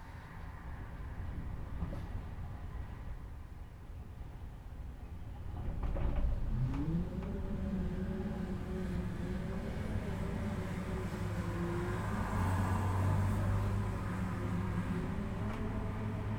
The sound of the wind, In the hotel
Zoom H6 MS
Changhua County, Taiwan, 9 March